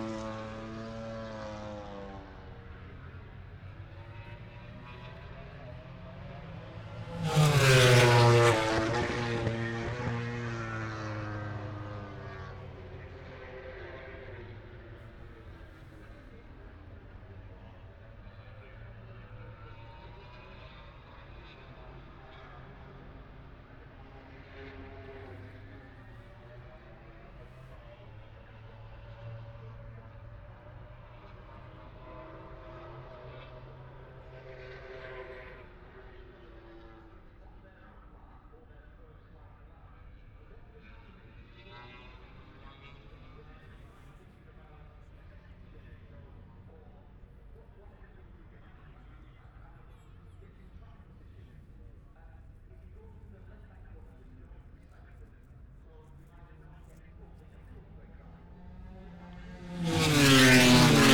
Silverstone Circuit, Towcester, UK - british motorcycle grand prix ... 2021
moto grand prix free practice four ... wellington straight ... dpa 4060s to MixPre3 ...
England, United Kingdom